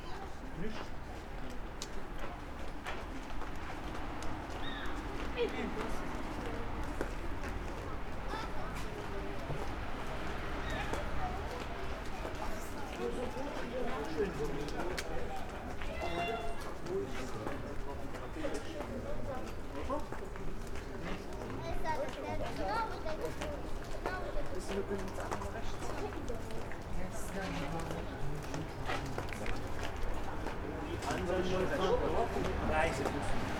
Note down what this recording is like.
singing from afar, people, steps, cat ...